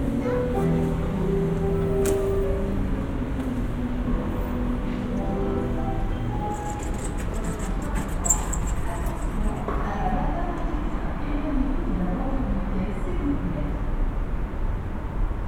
Piano à la gare Matabiau, Bonnefoy, Toulouse, France - Piano à la Gare Matabiau
Tout s'est enchainé comme une partition, le piano, l'escalator, les enfants jouant à des jeux en bois, j'ai déambulé prise en pleine immersion dans un paysage que les inconnus jouent pour le plaisir des oreilles. A moi de le saisir, et de vous le faire partager.
Prise son avec des micros binauraux et un zoom h4n, à ECOUTER AU CASQUE : sons à 360 degré.
16 January